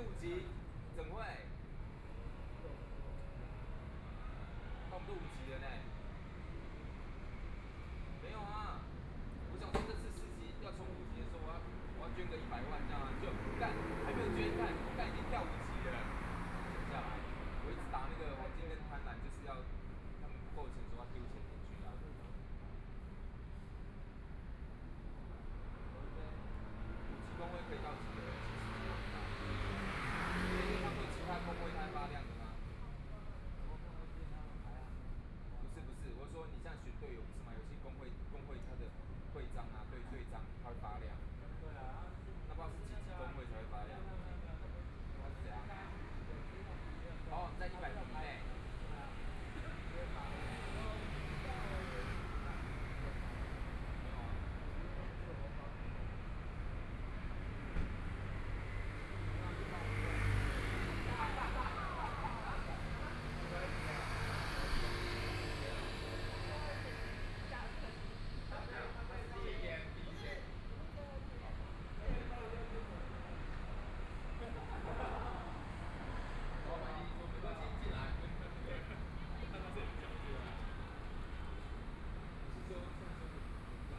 Group of young people chatting, Traffic Sound
Please turn up the volume
Binaural recordings, Zoom H4n+ Soundman OKM II
Hualien County, Taiwan, 24 February 2014, 15:51